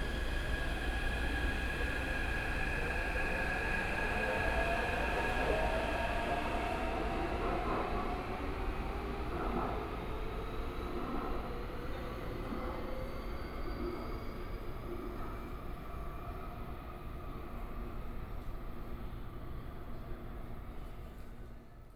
Taipei Station, Zhongzheng District - in the MRT stations